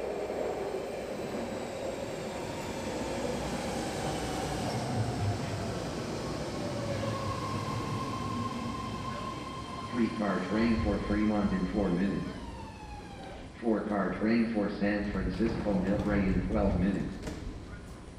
Ashby Bart station, Berkeley
Ashby Bart station, Berkeley
Berkeley, CA, USA, 20 November, 02:20